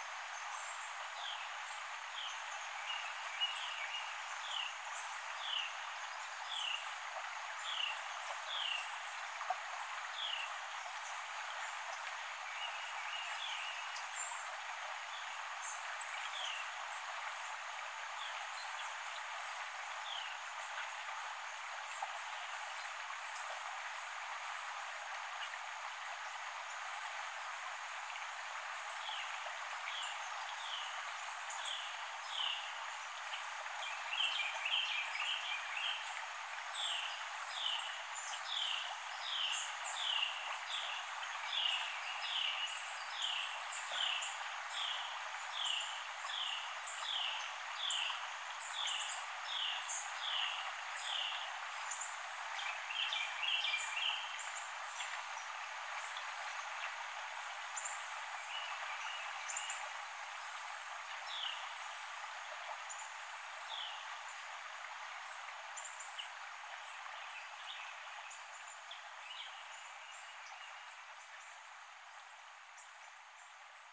Antietam Creek at Antietam Battlefield, Sharpsburg, MD, USA - The Battle of Antietam
A recording taken on the banks of Antietam Creek just south of the final battle of Antietam.